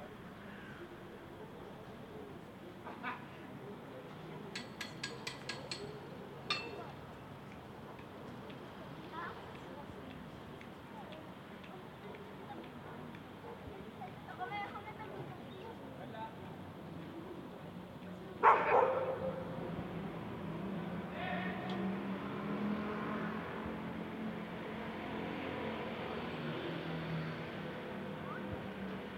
Volos, Greece - Metamorphosis Square in the afternoon
Recordings of sounds on the ground floor from a 5th floor balcony. We can hear sounds from the street, a family in a park and noises from a small construction site across from them.
13 January 2016